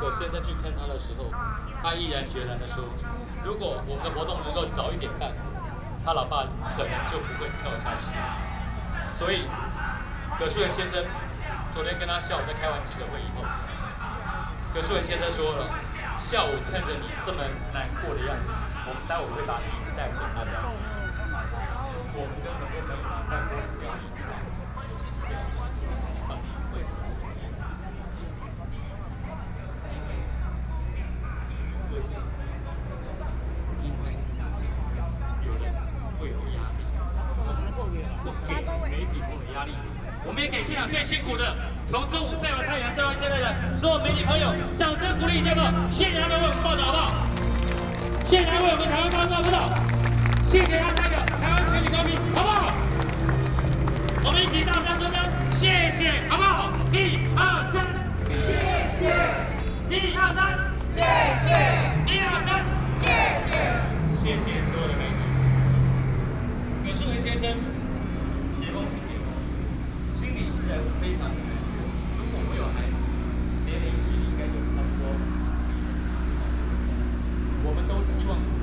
Taipei, Taiwan - Protest
Protest, Sony PCM D50 + Soundman OKM II
中正區 (Zhongzheng), 台北市 (Taipei City), 中華民國, 29 September 2013